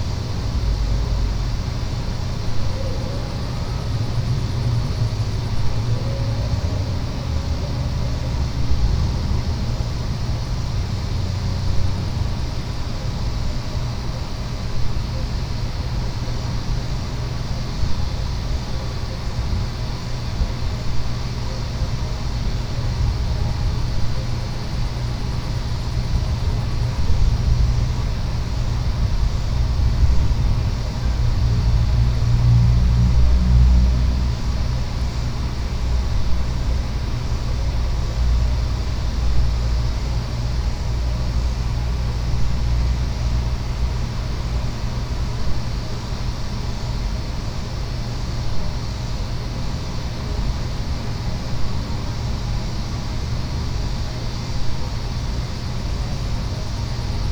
neoscenes: Fair sounds in the night